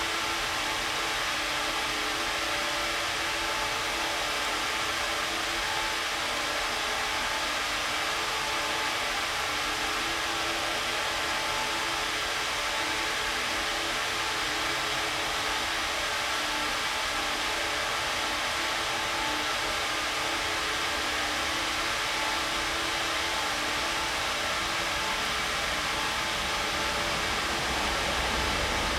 Reading, UK - Gaswork Pipes Kennet Canalside
I've walked past these pipes dozens of times and have enjoyed their singing, with the addition of the odd train and sounds from the birds and people on the canal itself. Sony M10 Rode VideoMicProX.
4 April 2017, 15:43